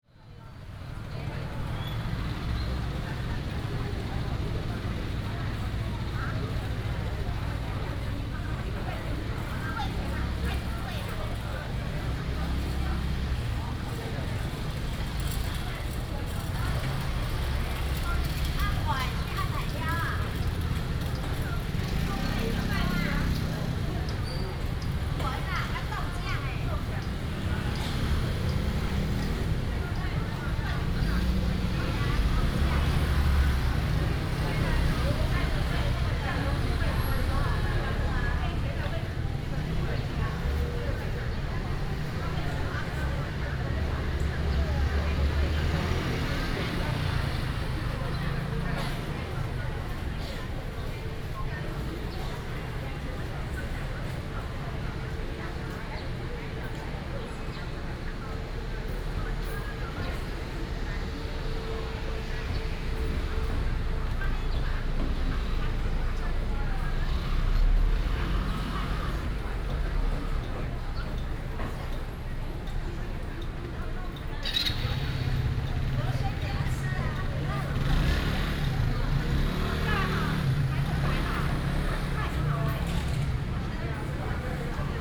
Ln., Guandong Rd., East Dist., Hsinchu City - Traditional market streets
Traditional market streets, Binaural recordings, Sony PCM D100+ Soundman OKM II
East District, Hsinchu City, Taiwan, 12 September 2017, 09:21